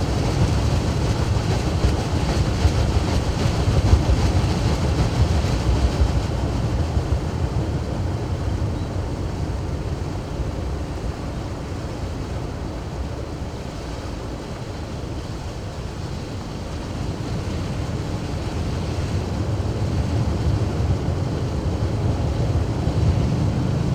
the city, the country & me: march 19, 2011
Berlin, Germany, 19 March, 15:07